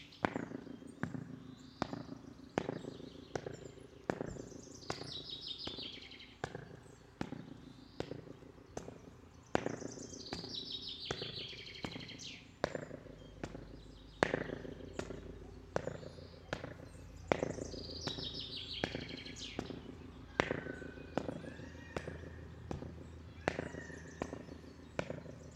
Taujėnai, Lithuania, echo steps
I have found some strange spot with short echo in Taujenai manor...